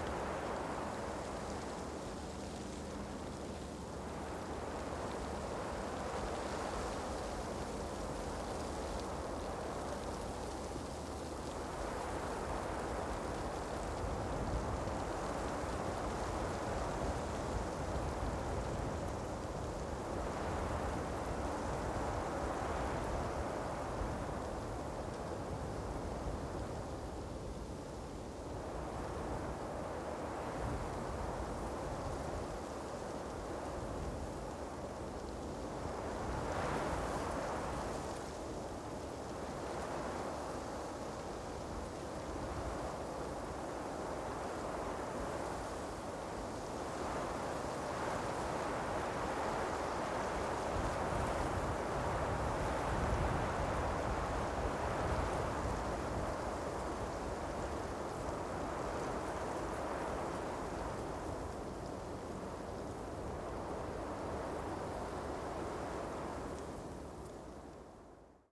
Spremberg, Germany - Wind through a young conifer tree
Small conifers have taken root around the edge of the mine precipice
24 August 2012, 14:14